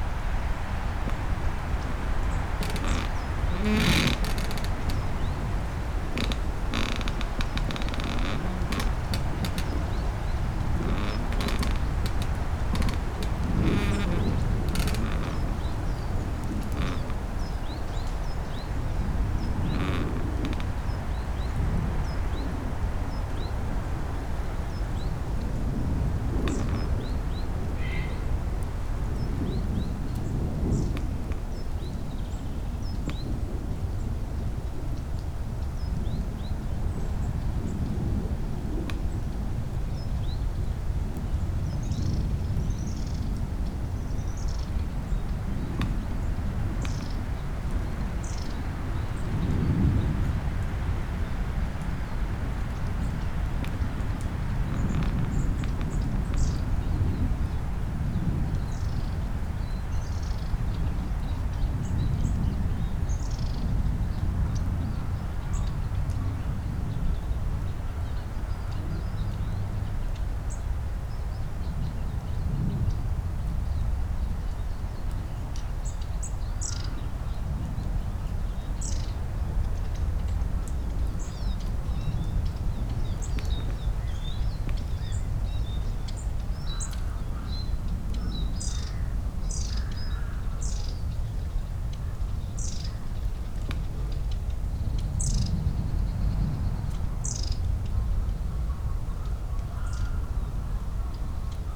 hohensaaten/oder: pine forest - the city, the country & me: squeaking pine tree
squeaking pine tree, wind, birds, pusher boat on the oder river getting closer
the city, the country & me: march 27, 2016
Bad Freienwalde (Oder), Germany, 27 March 2016